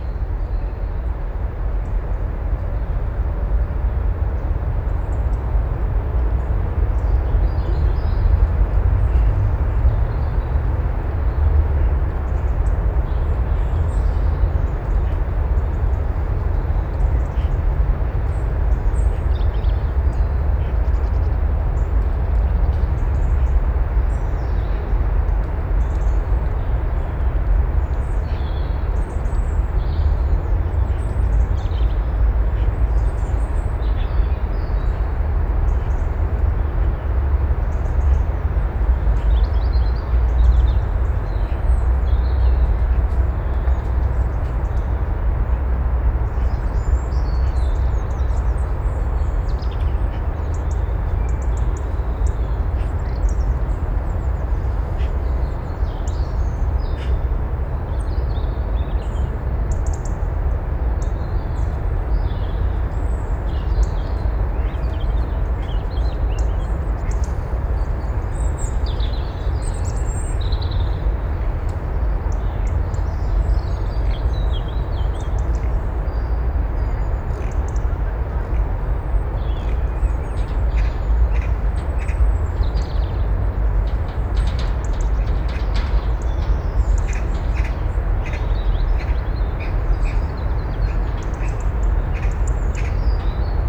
Safe in this enclave, the weight and wash of movement presses in. Resting above this mass, the passing clatter of a wheelbarrow, the chatter of magpies and the encircling rustle of wildlife growing familiar with my presence.